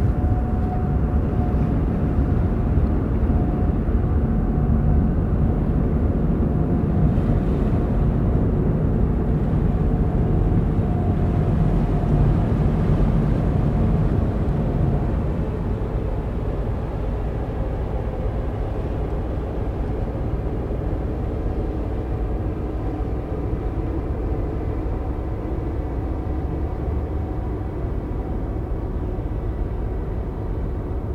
Kallosluis, Beveren, België - Kallosluis
[Zoom H4n Pro] Ship passing through the lock at Kallo.
Beveren, Belgium, 24 February 2019, 15:47